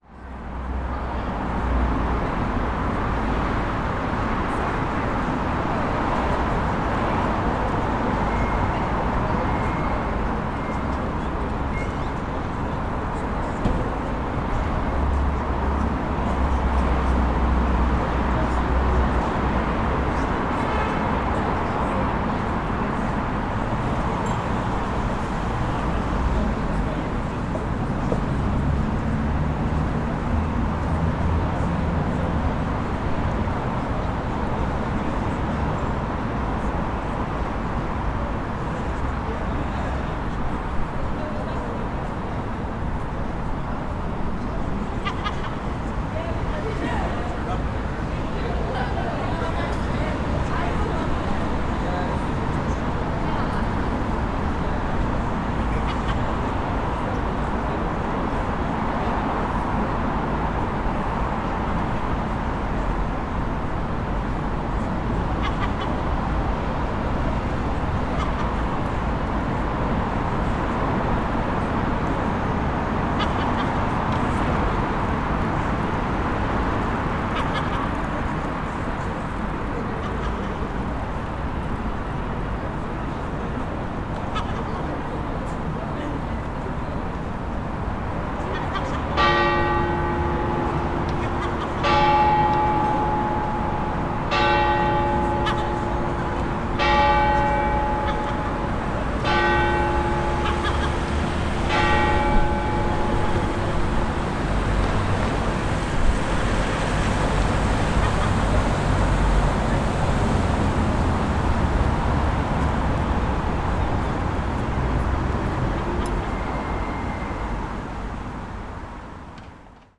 As droplets of rain began falling from the sky, I was across the street watching as people (tourists or locals) were enjoying a cold beverage outdoors at a local pub. This time was different, the servers were extra careful nearing customers, the distance between people seemed irregular, and there were moments of nervousness when people stepped too close to one another. Yet, in spirit, it seemed like everyone was trying to return to a normal routine. It was also my first time hearing the Albert Memorial Clock bells ring, right at the moment when the rain intensified.
County Antrim, Northern Ireland, United Kingdom, 4 July 2020